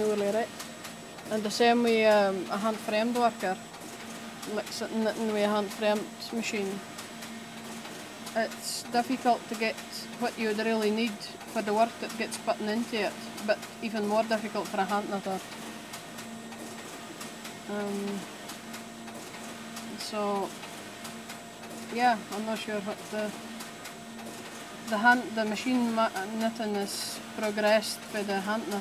This is Sandra Johnson and I talking about the differences between domestic knitting machines, hand knitting and industrial knitting machines. In the background, the shima machine churns on. This is where Sandra works as a linker; she also has a croft in Yell and her own flock of Shetland sheep. I loved meeting Sandra, who has a hand in every part of the wool industry here on Shetland, from growing the wool at the start, to seaming up knitted garments at the end.In this recording she also discusses her work as a freelance machine knitwear linker, and the work she did in this capacity. Recorded with Audio Technica BP4029 and FOSTEX FR-2LE.